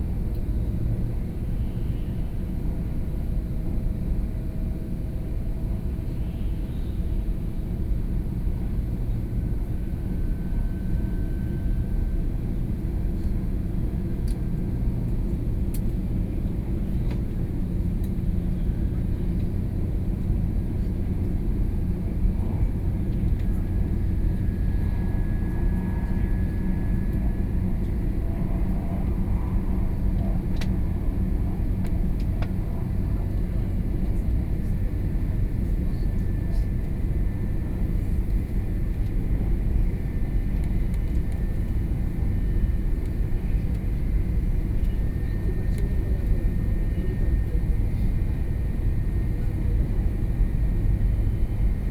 Taoyuan, Taiwan - Taiwan High Speed Rail
桃園縣, 中華民國, February 2013